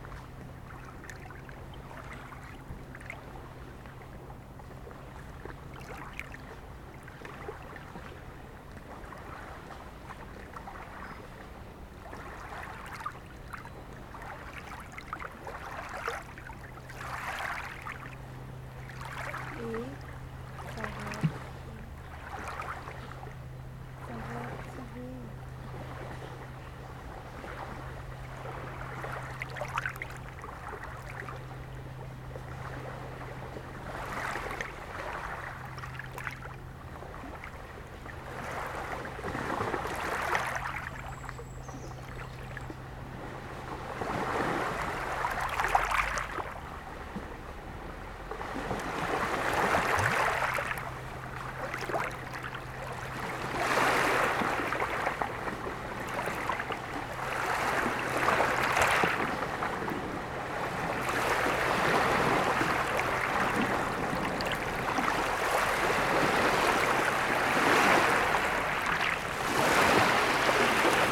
Plage de la pointe de l'Ardre pas de vent sur le lac, un bateau à moteur passe, plusieurs minute après l'onde aquatique de sa trainée vient faire déferler des vagues sur le rivage.